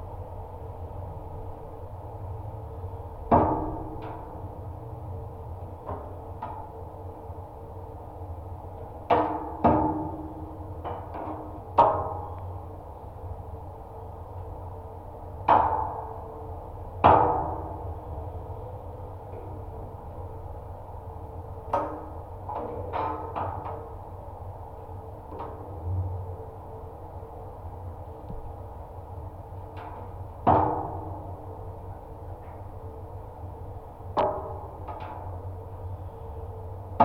Jūrmala, Latvia, empty sport hall contact
contact mics and geophone on Majori Sport Hall constructions. The Hall is known for: Recognition at the Award of Latvian architecture 2007
Nomination for Russian architecture prize Arhip 2009
Shortlisted at EU prize for Contemporary architecture - Mies Van Der Rohe Award 2009
Vidzeme, Latvija